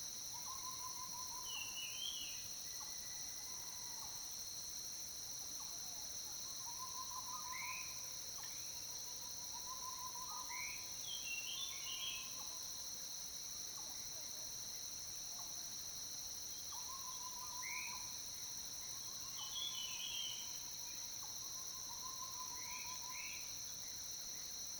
中路坑生態園區, Puli Township - Bird calls
in the morning, Bird calls, Dogs barking, Insect sounds
Zoom H2n MS+XY
Nantou County, Taiwan, June 2015